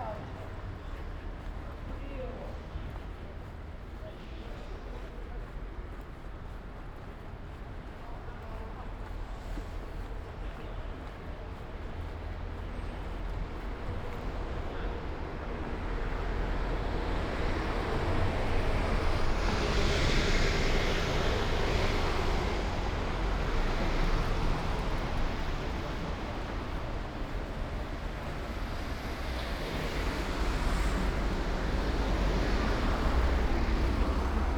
Ascolto il tuo cuore, città. I listen to your heart, city. Several chapters **SCROLL DOWN FOR ALL RECORDINGS ** - Sunday walk with ice cream and bells in the time of COVID19 Soundwalk
"Sunday walk with ice cream and bells in the time of COVID19" Soundwalk
Chapter XCIII of Ascolto il tuo cuore, città. I listen to your heart, city
Sunday, May 31st 2020. San Salvario district Turin, walk to a borderline “far” destination. One way trip eighty-two days after (but day twenty-eight of Phase II and day fifteen of Phase IIB and day nine of Phase IIC) of emergency disposition due to the epidemic of COVID19.
Start at 11:42 a.m. end at 00:18 p.m. duration of recording 26'10''
The entire path is associated with a synchronized GPS track recorded in the (kmz, kml, gpx) files downloadable here: